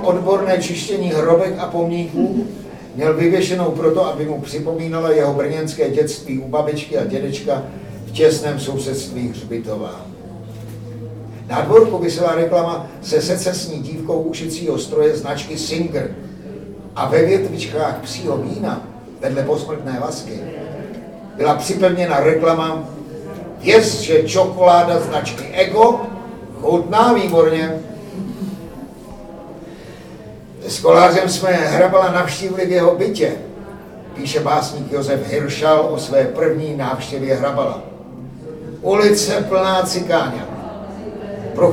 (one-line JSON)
{"title": "Na hrázi, Noc Literatury", "date": "2011-05-11 21:15:00", "description": "Ladislav Mrkvička čte ve Výčepu vína U Hrabala úryvek z knihy V rajské zahradě trpkých plodů o Hrabalovi a jeho životě v Libni od Moniky Zgustové . Noc literatury změnila na jeden večer pusté ulice kolem Palmovky v živou čvrť plnou lidí kvačících z jednoho místa čtení na druhé.", "latitude": "50.11", "longitude": "14.47", "altitude": "191", "timezone": "Europe/Prague"}